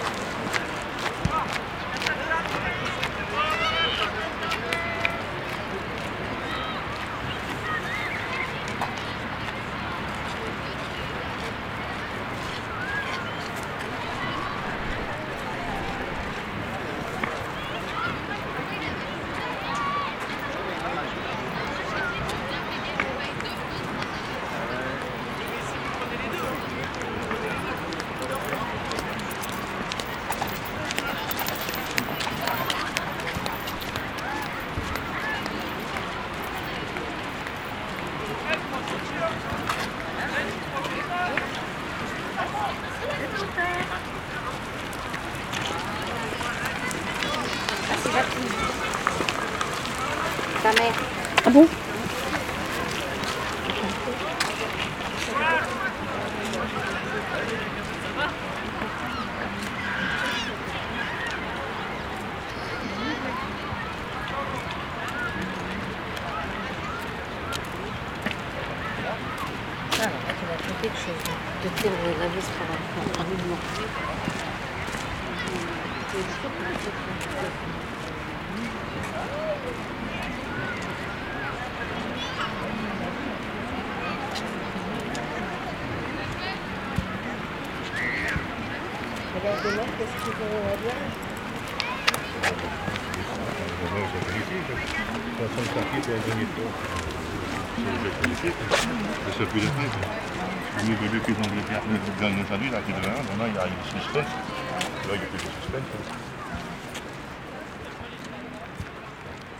In front of the sea, people on the beach, Villers-sur-mer, Normandy, France, Zoom H6